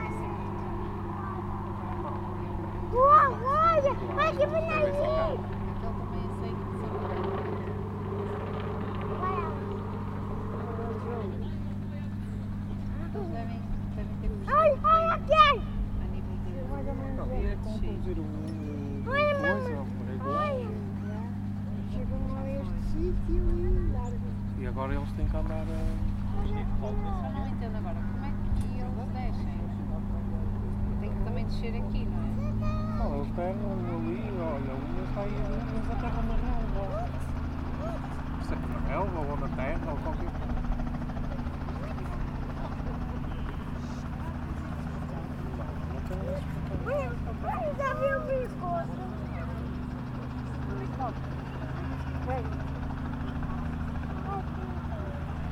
Zuschauerstimmen und startende Flugzeuge.
Audience voices and starting airplanes.